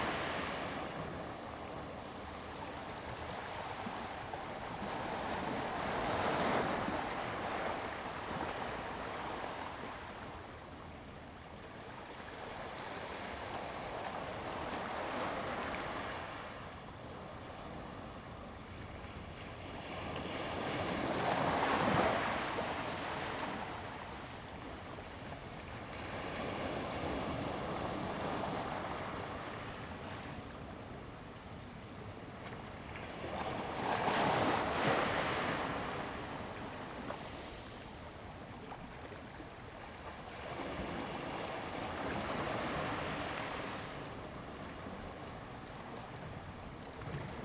龍門港, Koto island - Small pier
Small pier, Sound of the waves
Zoom H2n MS +XY
Lanyu Township, Taitung County, Taiwan